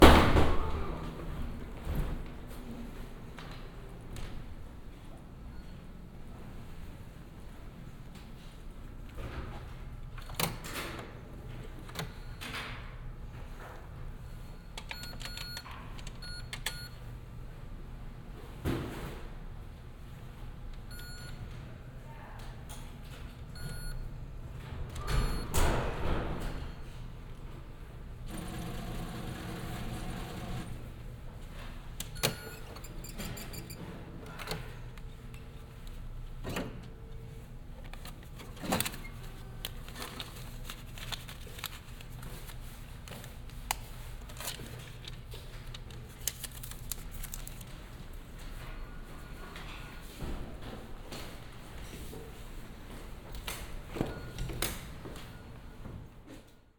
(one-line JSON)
{"title": "Montreal: Royal Bank on Guy - Royal Bank on Guy", "date": "2009-02-15 16:28:00", "description": "equipment used: Olympus LS-10 & OKM Binaurals\nGetting money out of the ATM", "latitude": "45.50", "longitude": "-73.58", "altitude": "57", "timezone": "America/Montreal"}